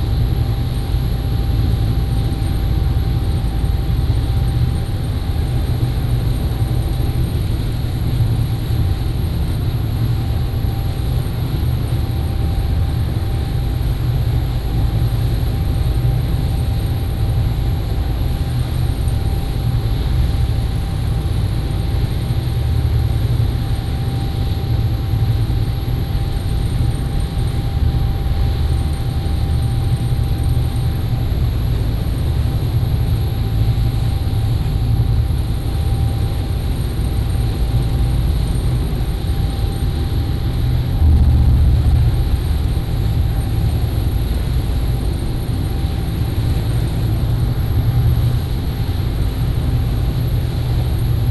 Nangan Township, Taiwan - On a yacht
Aboard yacht, Sound of the waves
Lienchiang County, Taiwan, 14 October 2014, 08:39